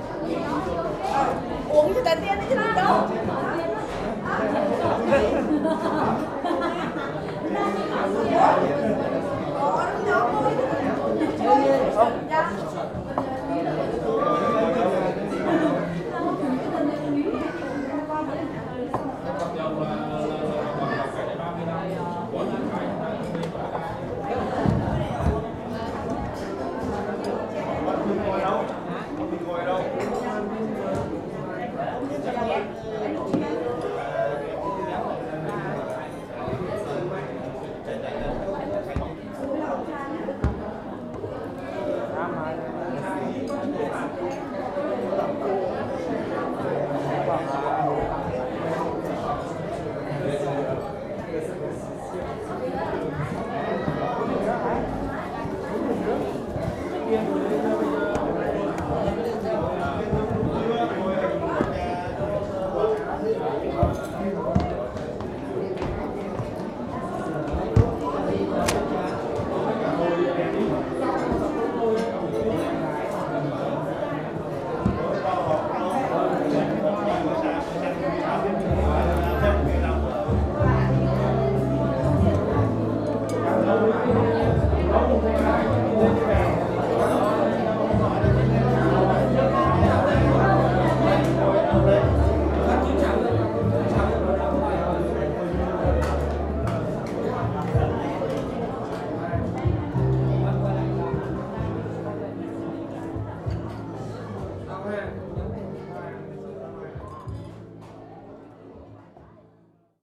berlin, herzbergstraße: dong xuan center, halle 3, restaurant
vietnamese restaurant at dong xuan center, solo entertainer preparing his synthesizer for a vietnamese wedding party
the city, the country & me: march 6, 2011
Berlin, Deutschland, 6 March 2011